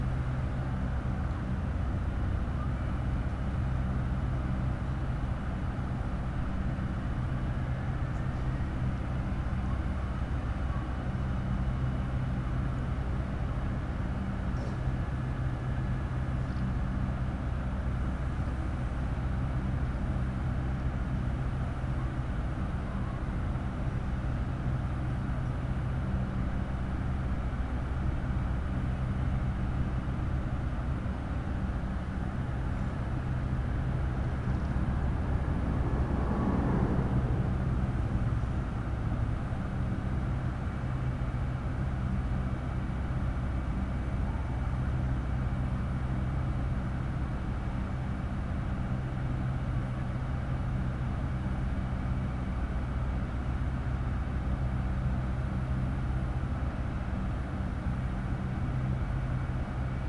Novakova ulica, Maribor, Slovenia - corners for one minute
one minut for this corner: Novakova ulica 5